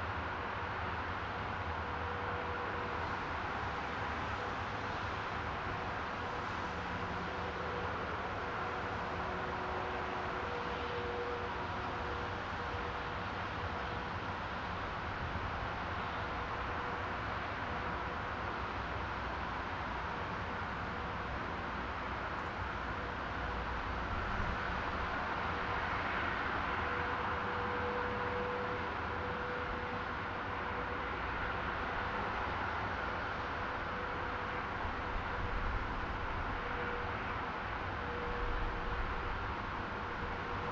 Rijeka, Croatia, Highway - Highway 01
6 March 2013